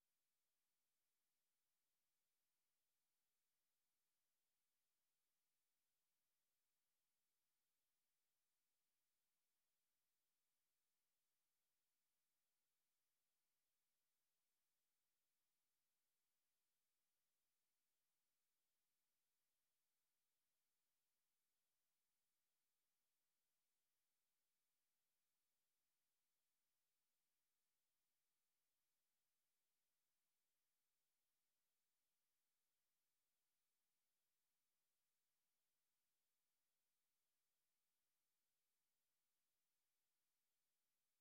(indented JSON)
{
  "title": "piskovna, Dolni Pocernice",
  "date": "2009-06-05 14:35:00",
  "description": "sand pits, natural reservation, rec. Grygorij Bagdasarov",
  "latitude": "50.08",
  "longitude": "14.60",
  "altitude": "235",
  "timezone": "Europe/Prague"
}